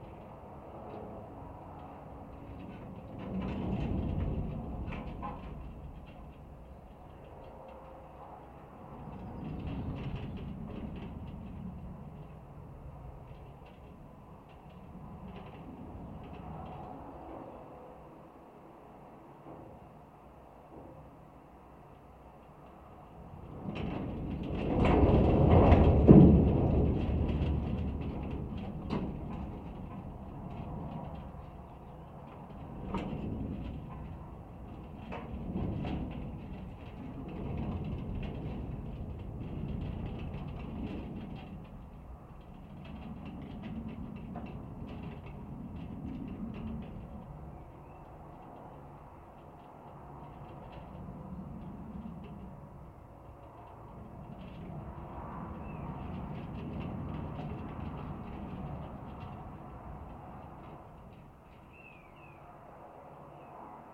Chania 731 00, Crete, abandoned metallic object
some old abandones rusty cradle-like machine in vineyard. contact microphone
Chania, Greece, 7 May 2019